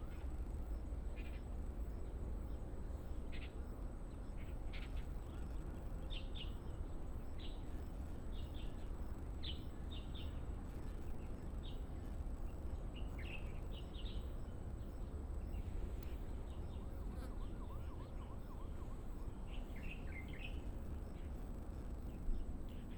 東三湖, 三湖村 Xihu Township - next to the high-speed railway
A variety of birds call, traffic sound, next to the high-speed railway, Binaural recordings, Sony PCM D100+ Soundman OKM II